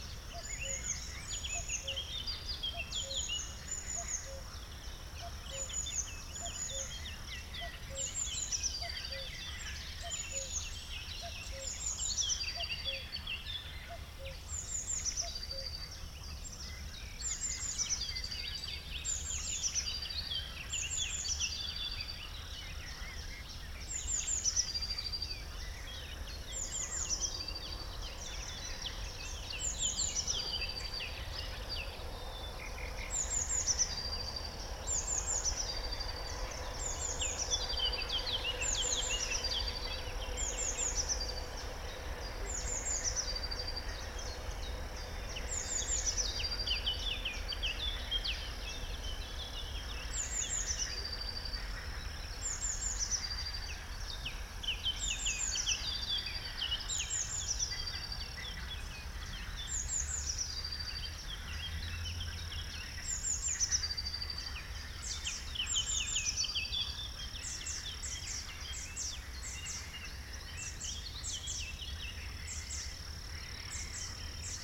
Chindrieux, France - Forêt foisonnante
La vie foisonnante de la forêt de Chautagne, oiseaux, grenouilles, insectes le matin.